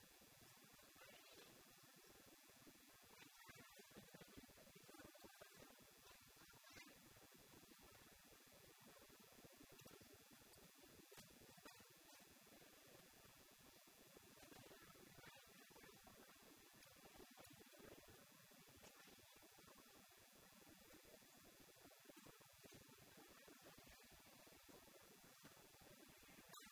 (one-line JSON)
{"title": "Mumbai, Elephanta Island, fighting monkeys", "date": "2011-03-13 15:58:00", "description": "India, maharashtra, Mumbai, Elephanta Island, Monkey, Elephanta Island (also called Gharapuri Island or place of caves) is one of a number of islands in Mumbai Harbour, east of Mumbai, India. This island is a popular tourist destination for a day trip because of the islands cave temples, the Elephanta Caves, that have been carved out of rock.", "latitude": "18.96", "longitude": "72.93", "altitude": "68", "timezone": "Asia/Kolkata"}